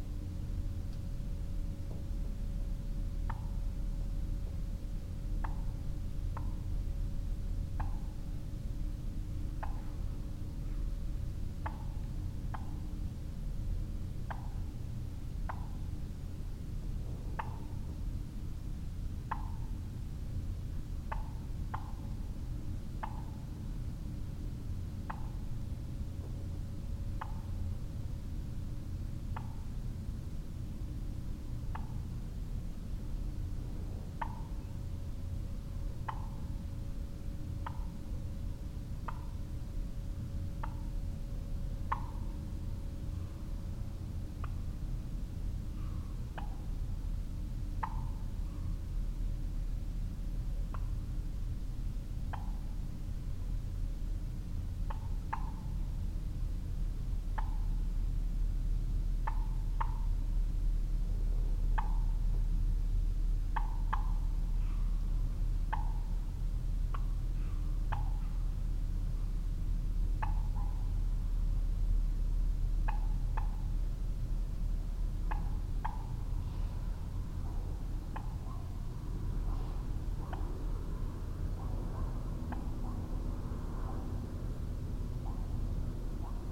Downe, NJ, USA - wild turkey scuffle
I'm not sure of what happens to the wild turkey at the conclusion of this recording. A barred owl hoots from a nearby tree shortly before the obvious scuffle.